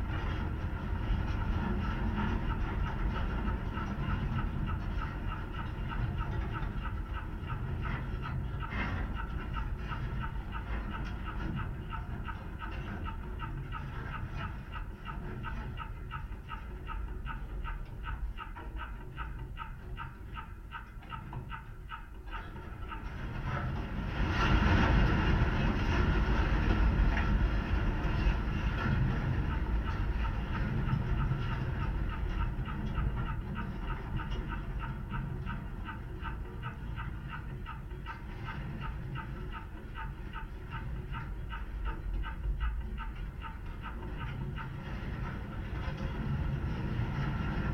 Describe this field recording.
contact microphones on a rusty wire